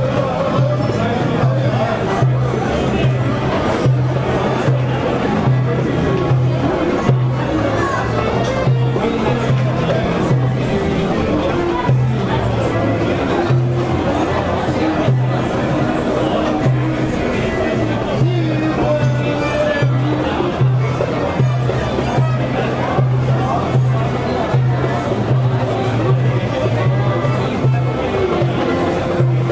Istanbul, Besşiktaş, dining out
The turkish word KALABALIK is one of the most frequnt ones in use anywhere in Istanbul. It means crowds, accumulation of people, masses, swarming. Here we hear an example of a kalabalık on a thursday night, dining out in Beşiktaş.
23 September 2010